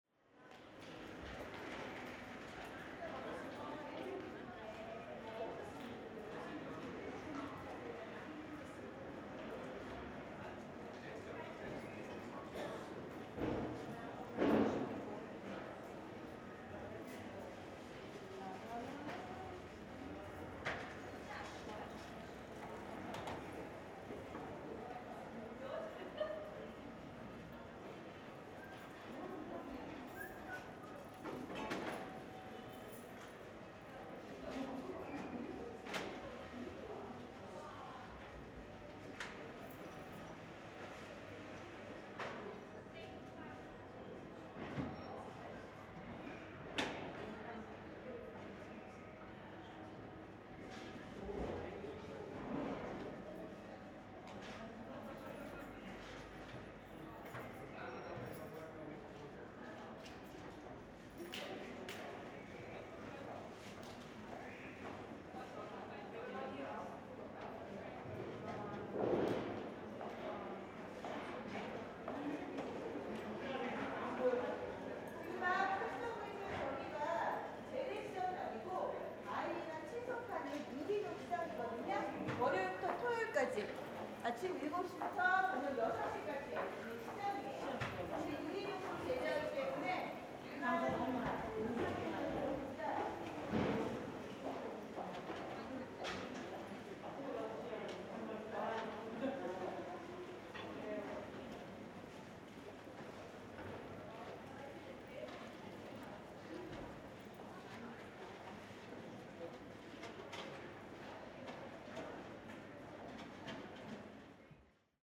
Torbogen, Salzburg, Österreich - In einem Torbogen
Eine Touristengruppe kommt vorbei und lauscht einer Reiseleiterin.